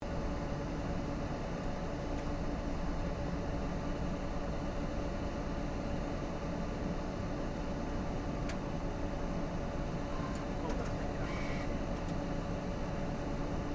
big printer
愛知 豊田 printer